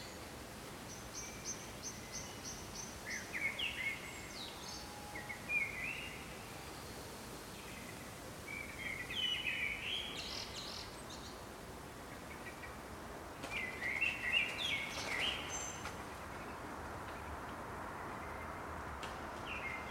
{"title": "Rue Rémy Cogghe, Roubaix, France - 1essai", "date": "2020-04-17 21:15:00", "description": "Ambiance parc urbain", "latitude": "50.69", "longitude": "3.17", "altitude": "31", "timezone": "Europe/Paris"}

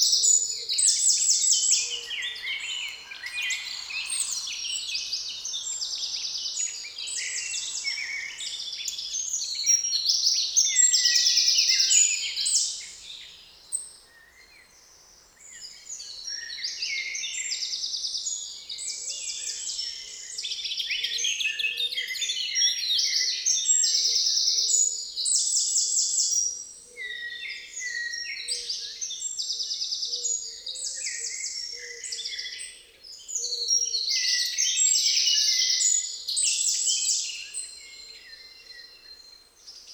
{"title": "Mont-Saint-Guibert, Belgique - In the woods", "date": "2017-05-21 07:30:00", "description": "Recording of the birds singing in the forest, on a spring sunday morning. It's not exactly a forest but a small wood in a quite big city, so there's a lot of distant noises : trains, cars, planes. I listed, with french name and english name (perhaps others, but not sure to recognize everybody) :\nFauvette à tête noire - Eurasian Blackcap\nRouge-gorge - Common robin\nMerle noir - Common blackbird\nPigeon ramier - Common Wood Pigeon\nMésange bleue - Eurasian Blue Tit\nMésange charbonnière - Great Tit\nCorneille noire - Carrion Crow\nPic vert - European Green Woodpecker\nChoucas des tours - Western Jackdaw", "latitude": "50.64", "longitude": "4.62", "altitude": "132", "timezone": "Europe/Brussels"}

